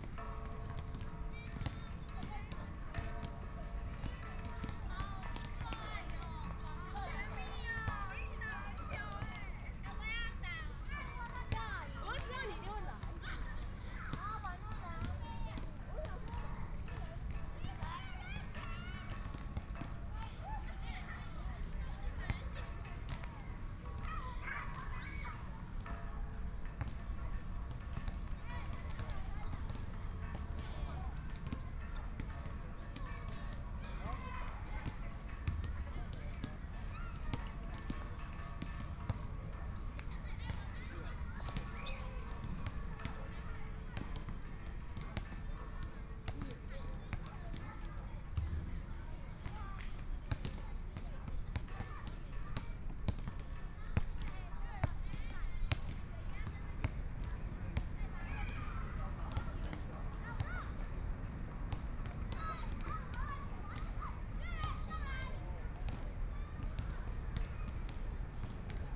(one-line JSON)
{"title": "榮星公園, Zhongshan District - Play basketball", "date": "2014-01-20 15:09:00", "description": "elementary school students playing basketball, Traffic Sound, the sound of the Kids playing game, Binaural recordings, Zoom H4n+ Soundman OKM II", "latitude": "25.06", "longitude": "121.54", "timezone": "Asia/Taipei"}